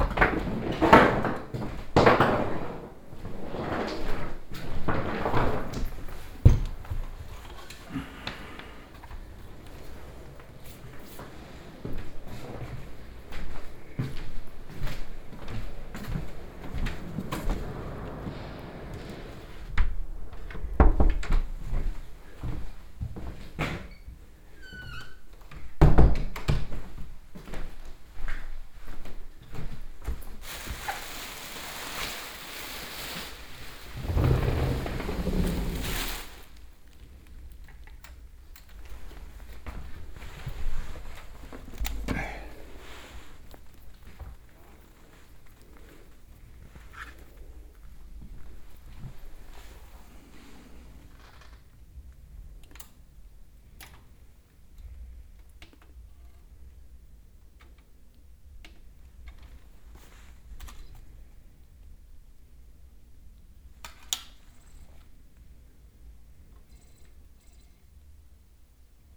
WLD atelier in NDSM yard hall
short visiting my atelier in the monumental NDSM yard building; because it is a hot sunday there are not much artists, the scaters in the indoor scating hall has taken over the sounds in the mainhall; entering my atelier and hearing the playback of a part of a soundscape "the animal shop" mixed with ateliersounds
Amsterdam, The Netherlands, 18 July 2010